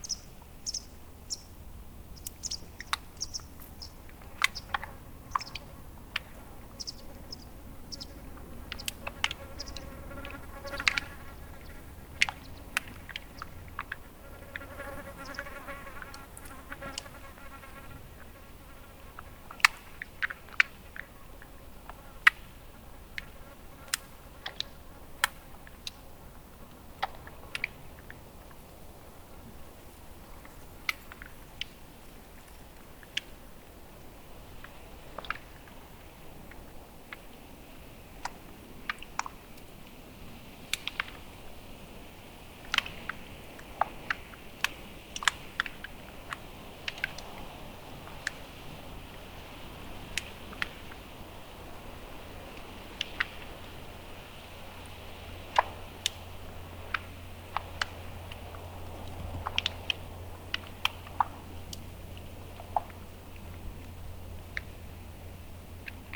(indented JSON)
{
  "title": "Turku University Botanical Garden, Turku, Finland - Water lilies crackling on a pond",
  "date": "2020-07-17 16:36:00",
  "description": "A warm day at the Turku University Botanical Garden. The numerous water lilies make a distinct crackling sound. Zoom H5 with default X/Y module. Gain adjusted and noise removed in post.",
  "latitude": "60.44",
  "longitude": "22.17",
  "altitude": "4",
  "timezone": "Europe/Helsinki"
}